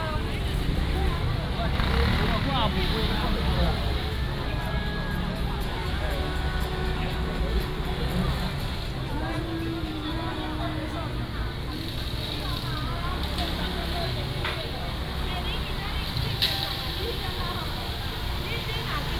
Aiwu Rd., Hemei Township - Walking through the traditional market
Walking through the traditional market, Traffic sound, Vendors